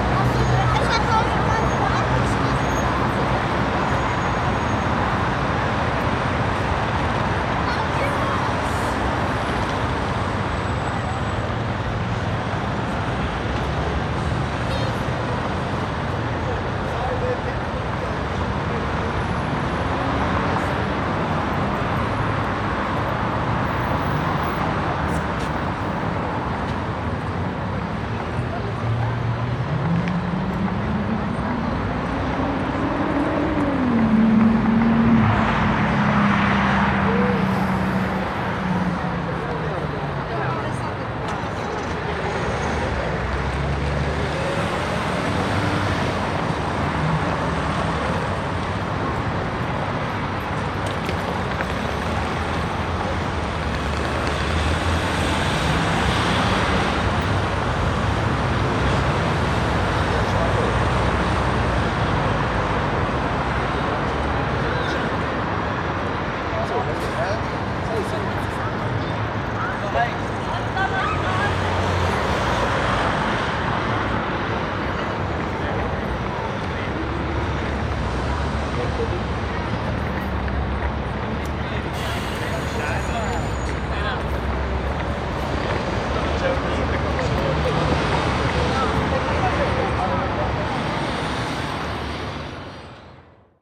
Mitte, Berlin, Deutschland - Berlin. Potsdamer Platz
Standort: Potsdamer Platz (historische Kreuzung Potsdamer Straße Ecke Ebertstraße). Blick Richtung Nord.
Kurzbeschreibung: Dichter Verkehr, Touristen, Kinder auf dem Schulausflug.
Field Recording für die Publikation von Gerhard Paul, Ralph Schock (Hg.) (2013): Sound des Jahrhunderts. Geräusche, Töne, Stimmen - 1889 bis heute (Buch, DVD). Bonn: Bundeszentrale für politische Bildung. ISBN: 978-3-8389-7096-7
Berlin, Germany, 26 April 2012, 10:30